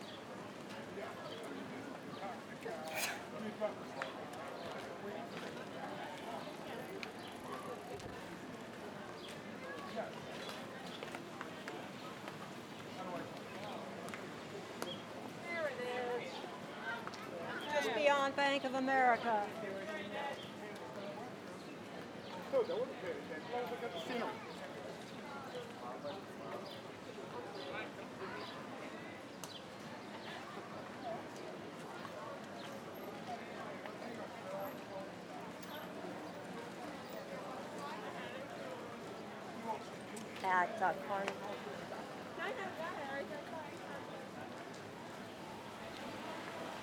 Provincetown, Ma, Commercial St., July 4th Weekend
Provincetown, Cape Cod, Commercial St.
3 July 2010, 16:30